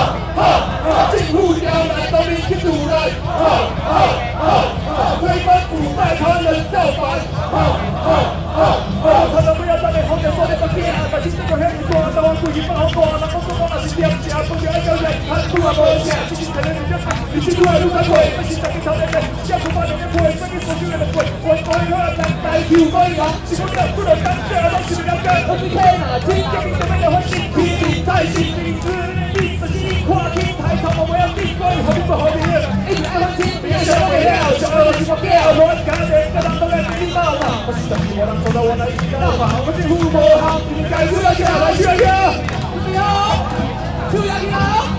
Ketagalan Boulevard, Taipei - Farmers' protests
Farmers' protests, Sony ECM-MS907, Sony Hi-MD MZ-RH1+ Zoom H4n